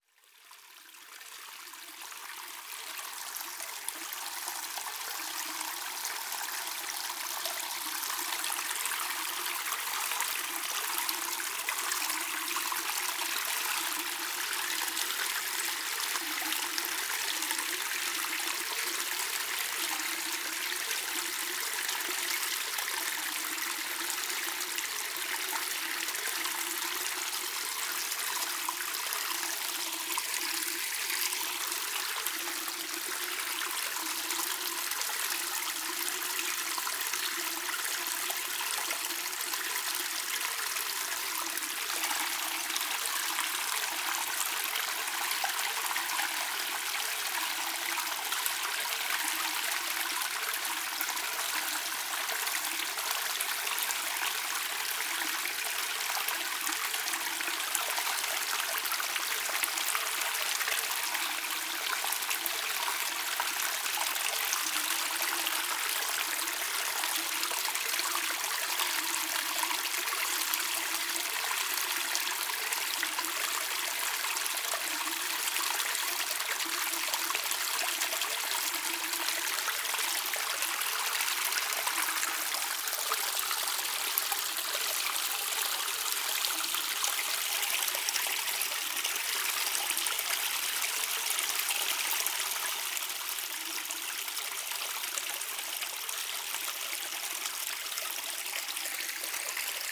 Blatno, Czechia - Bílina
Walking towards the source of the Bilina river. Binaural recording, soundman, zoom H2n
4 August, ~12:00